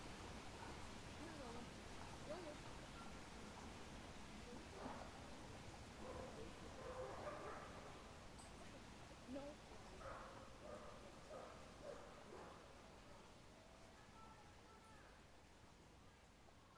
Sunnydene Park - sanctuary
Recording made in a park near my house in Toronto.
20 September, 14:55